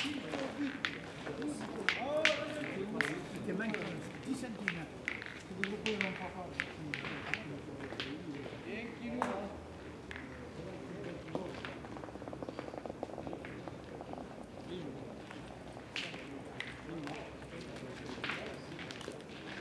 Parc Jourdan, Aix-en-Provence, France - Jeu de Boules
Jeu de Boules. stereo mic, cassette recorder.
15 August 1991